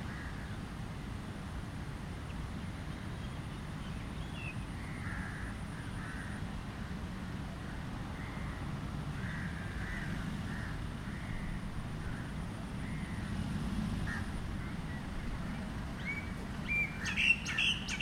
a sunny afternoon in ambang botanic, some birds, some cars, some wind